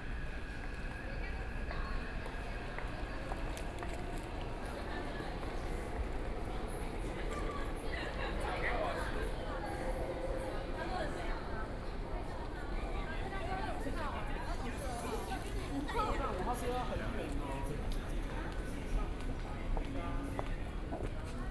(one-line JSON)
{"title": "Chiang Kai-Shek Memorial Hall Station, Taipei - MRT stations", "date": "2013-06-14 18:43:00", "description": "in the MRT stations, Sony PCM D50 + Soundman OKM II", "latitude": "25.03", "longitude": "121.52", "altitude": "19", "timezone": "Asia/Taipei"}